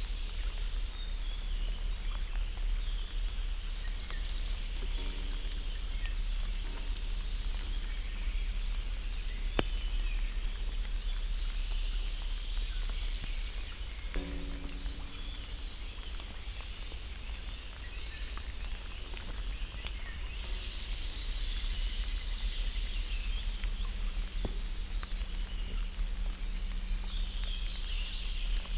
(binaural) standing on a short, rickety pier extending into the pond. thick raindrops splash on the water surface. every once in a while a raindrop hits an information post on the right.